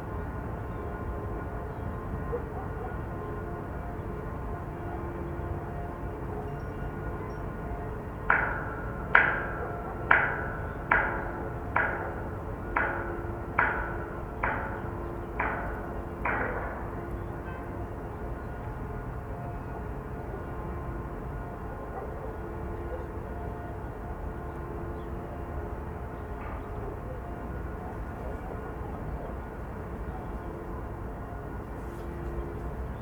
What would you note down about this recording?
Murmur of the city, recorded from high point. Banging noise from industrial areas. Rumeur de la ville, capturé d’un point haut. Bruit d’impacts venant d’un chantier.